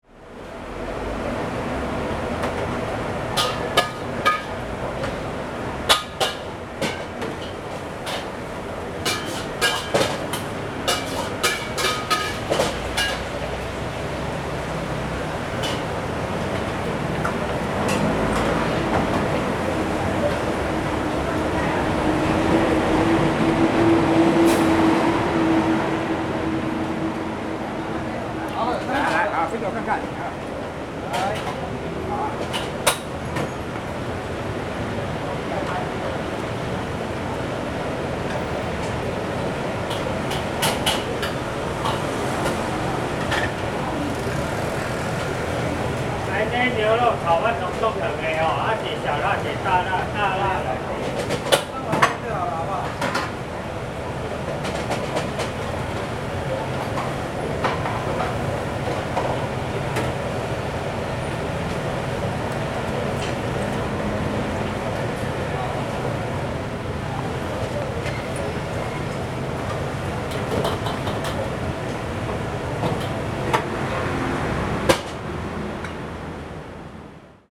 Yongyuan Rd., Yonghe Dist., New Taipei City - In the restaurant
In the restaurant, Sony ECM-MS907, Sony Hi-MD MZ-RH1